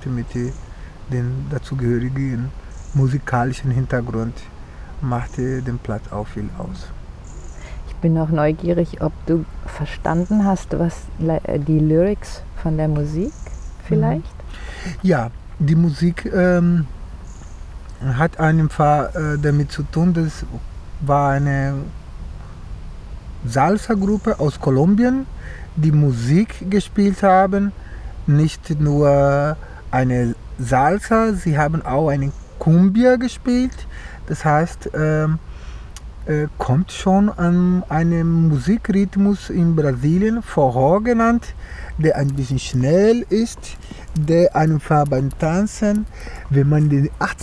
Kurpark Bad Hamm, Hamm, Germany - A romantic place...
Marcos takes us to his favourite place in Hamm… we are in the “Kurpark” of the spa “Bad Hamm”, sitting at a bench at the end of the pond, listening to the sounds around us… the people here, says Marcos are walking quietly and more slowly than elsewhere as if they were at a sacred place… and he adds a story, that once he has listened here at this place to familiar sounds from home…
Marcos führt uns an seinen liebsten Ort in der Stadt… im Kurpark von “Bad Hamm”, am Ende des Teiches… und er erzählt uns, dass er einmal hier ungewöhlichen und bekannten Klängen zugehört hat….